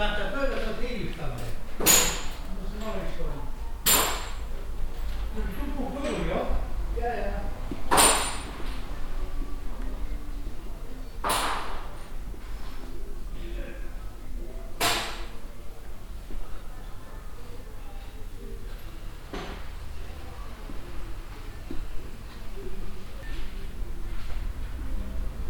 cologne, ehrenfeld, old freight yard areal, second hand store
a second hand factory hall with used furnitures and kitchen elements in all size - metal pieces are sorted by a worker
soundmap d - social ambiences and topographic field recordings
Cologne, Germany, 16 January 2011